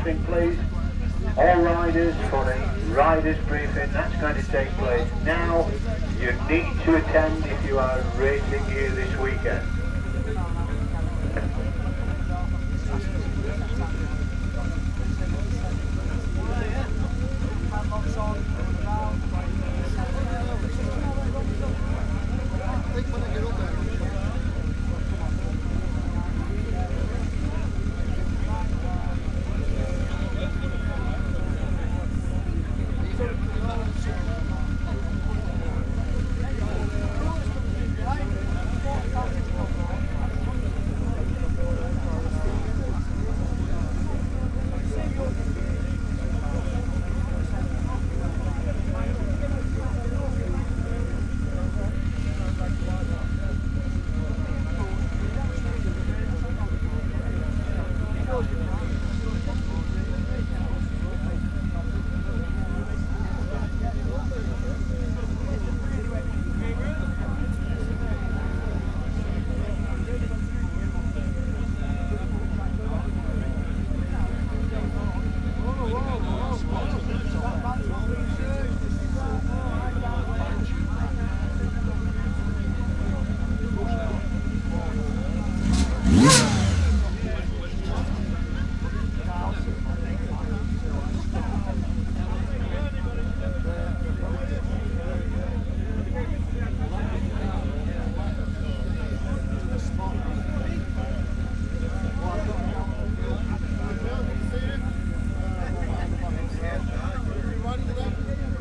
Glenshire, York, UK - Motorcycle Wheelie World Championship 2018 ...
Motorcycle Wheelie World Championship 2018 ... Elvington ... pit lane prior to the riders briefing ... lavalier mics clipped to baseball cap ...
18 August 2018